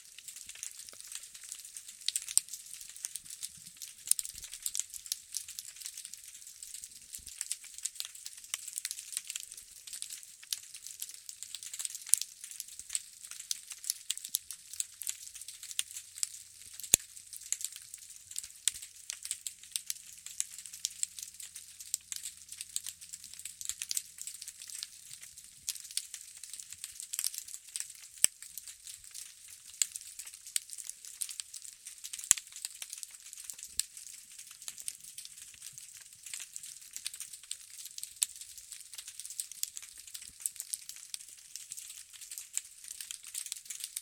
Kalk Bay Harbour, South Africa - Snapping Shrimps
Snapping Shrimps recorded using a Brodan Hydrophone to a Zoom H2n